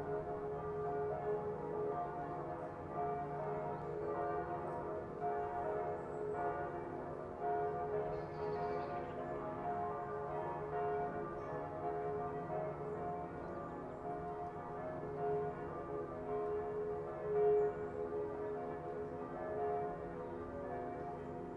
Vallendar, Deutschland - church bells in distance
TASCAM DR-100mkII with integrated Mics